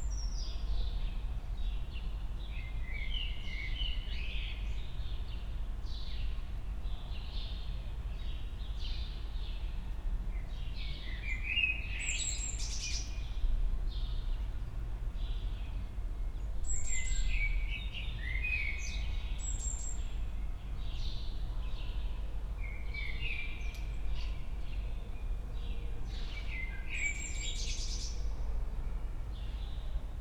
quite Sunday afternoon evening in spring
(Sony D50, Primo EM172)
Berlin, Germany, April 2014